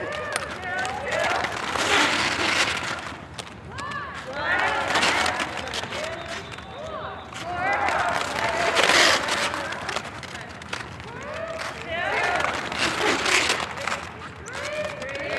Shaler Ave, Glendale, NY, USA - Roller Derby Training Exercises
A roller derby team performs a set of exercises that consist of sudden skating stops.